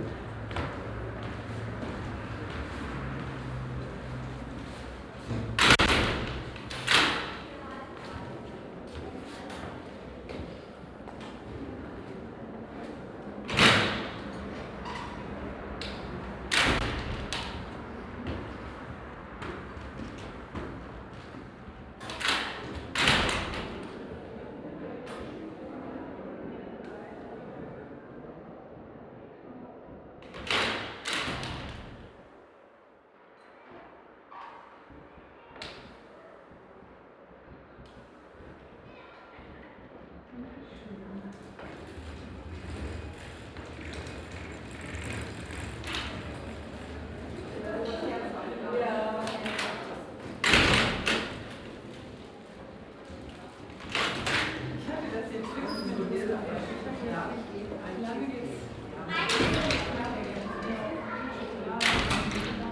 Südviertel, Essen, Deutschland - essen, museum folkwang, entry, double door
Im Eingangsbereich des Museums Folkwang. Der Klang zweier großer Doppeltüren aus Glas und Metall beim Öffnen und Schliessen durch die Besucher.
At the entry of the museum Folkwang. The sound of two double doors out of glas and metal. The sound of the opening and closing by the museum visitors.
Projekt - Stadtklang//: Hörorte - topographic field recordings and social ambiences
Essen, Germany, April 2014